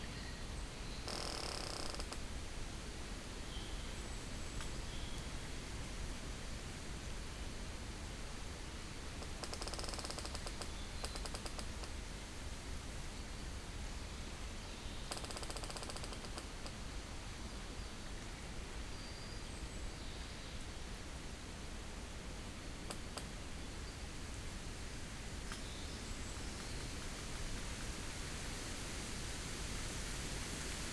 Niedertiefenbach, Beselich - squeaking tree, forest ambience
Beselich Niedertiefenbach, forest edge, wind and squeaking trees, evening ambience
(Sony PCM D50, DPA4060)